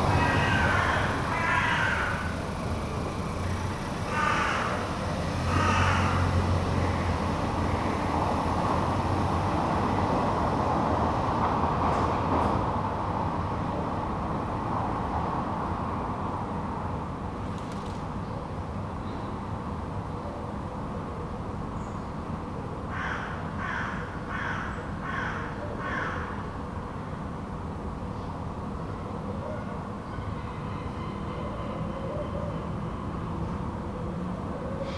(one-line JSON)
{"title": "PUHU Otel, Kadıköy, İstanbul, Turkey - 922 AB sunday morning atmosphere", "date": "2022-09-25 07:20:00", "description": "Sunday morning atmosphere recorded from a window of a hotel room (2nd floor).\nAB stereo recording made from internal mics of Tascam DR 100 MK III.", "latitude": "40.99", "longitude": "29.03", "altitude": "19", "timezone": "Europe/Istanbul"}